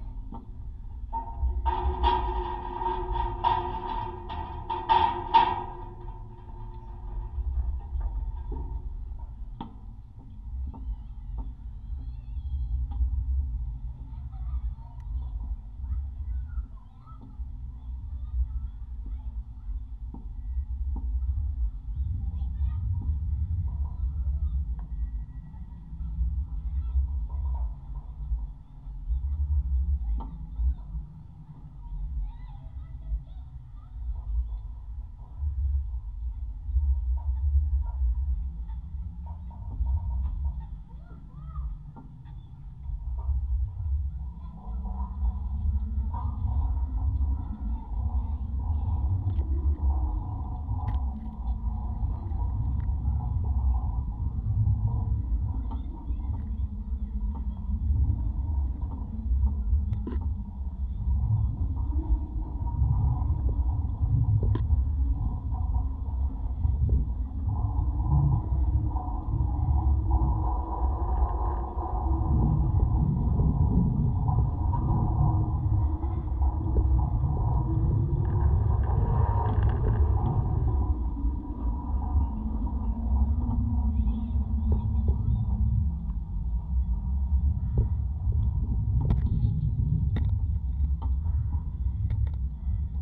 Utena, Lithuania, footbridge
4 contact microphones on various parts of a footbridge
June 2018